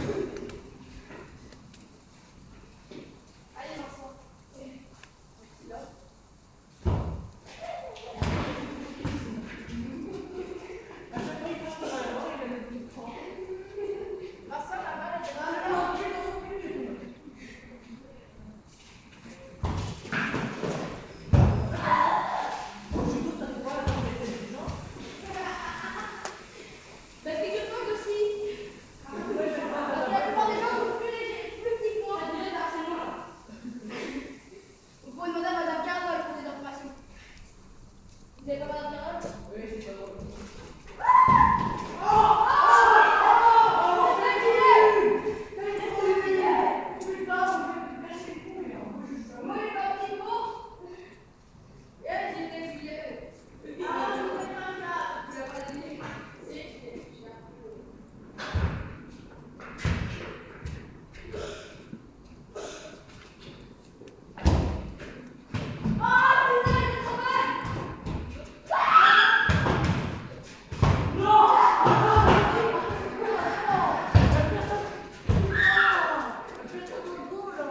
Quartier du Biéreau, Ottignies-Louvain-la-Neuve, Belgique - Playing football
Sunday afternoon, annoyed children play football in a huge hall with loud reverb.